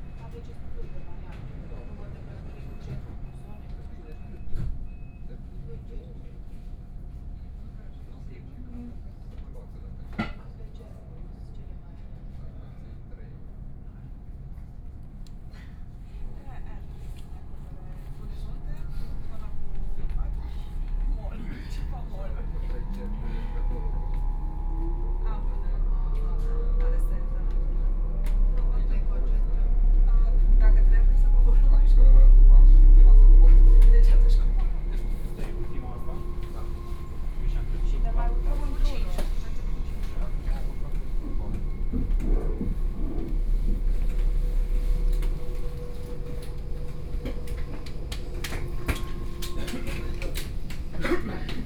Neuhauser Straße, 慕尼黑德國 - S- Bahn
S- Bahn, Line S8, In the compartment
6 May 2014, ~21:00